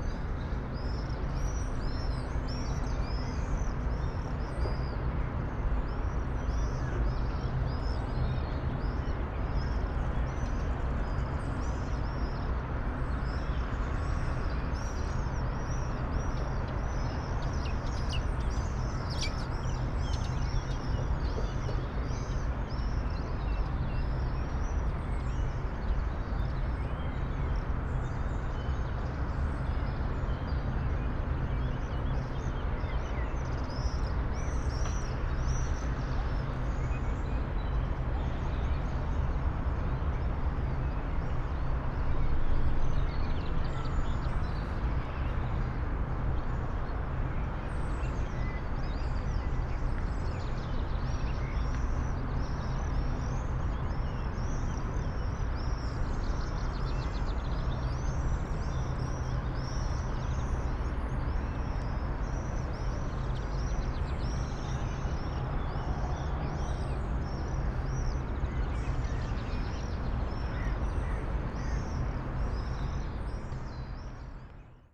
Maribor, Piramida - a city awakes
half way up on Piramida hill, in the vineyard above the city. at this time, not so many distinct sound sources are present, except the birds, so it was possible to catch a kind of fundamental tone of the city's activity, at high amplification levels.
(SD702, 2xNT1a)